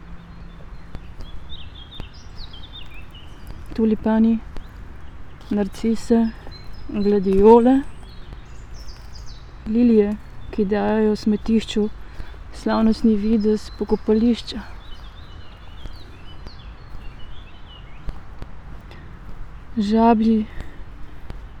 little island, river drava, melje - walking, reading poem
fragment from a reading session, poem Smetišče (Dubrište) by Danilo Kiš
this small area of land is sometimes an island, sometimes not, depends on the waters; here are all kind of textile and plastic pieces, hanging on branches, mostly of poplar trees and old willows, so it is a nice place to walk and read a poem from Danilo Kiš, ”Rubbish Dump"
Malečnik, Slovenia